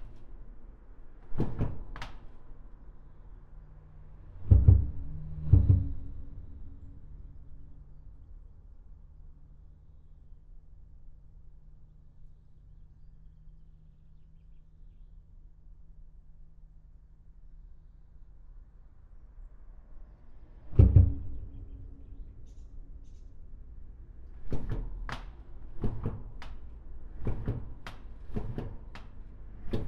The Brotonne bridge, recorded inside the bridge. This is an extremely quiet bridge compared to the Tancarville and Normandie ones, the only two other bridges above the Seine river. No trucks here, it's so quiet !
France, 23 July